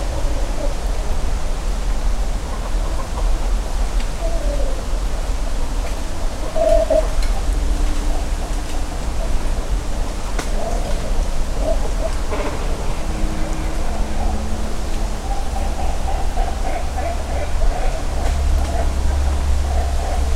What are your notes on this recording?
Great cormorant colony along a river in rural Japan. Recording ends at 13:00 as a distant factory siren announces the end of lunch break. Recorded with EM172 stereo mics attached to a large tree, Sony ECM M10 recorder.